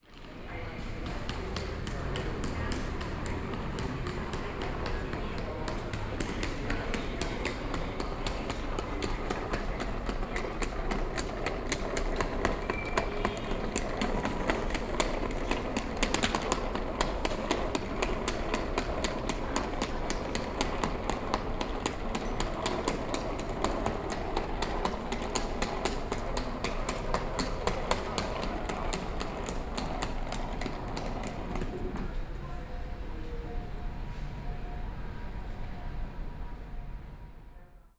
Zhuwei Station, New Taipei City - In subway stations